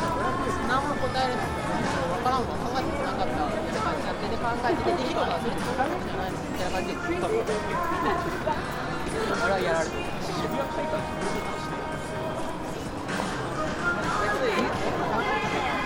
udagawacho, shibuya, tokyo - walk along the street
walk along one of the loudest streets in shibuya with an end stop on some backyard